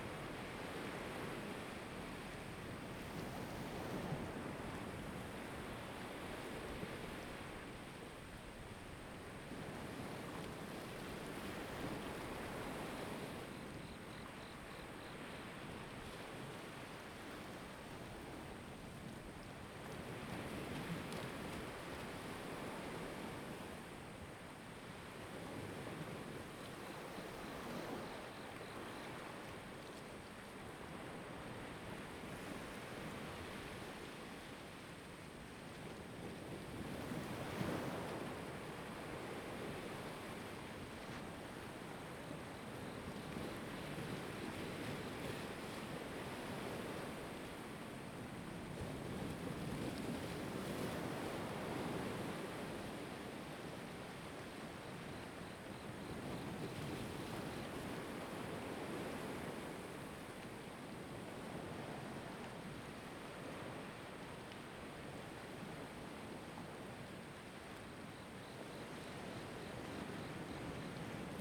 Jiayo, Ponso no Tao - sound of the waves
At the beach, sound of the waves
Zoom H2n MS +XY